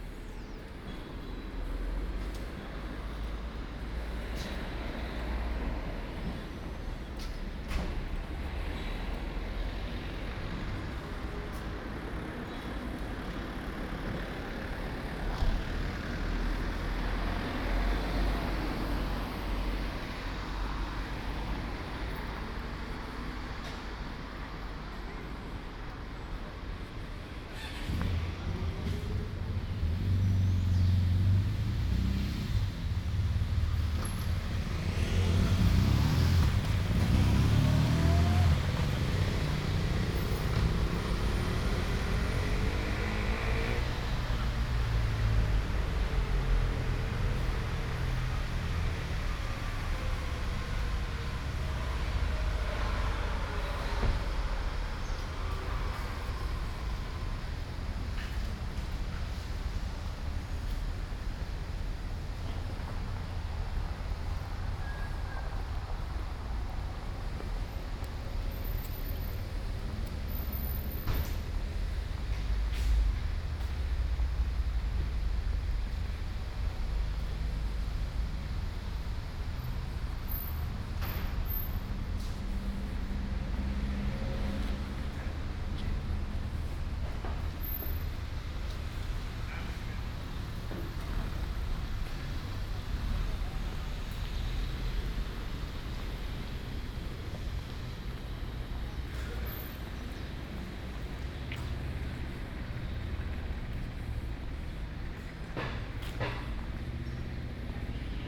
Carrer de lAlcalde Benjamí Cervera, Portbou, Girona, Spagna - PortBou Walk day1
Walking at PortBou on the trace of Walter Benjamin, September 28 2017 starting at 10 a.m. Bar Antonio, on the seaside, ramble, tunnel, out of tunnel, tunnel back, ramble, former Hotel Francia.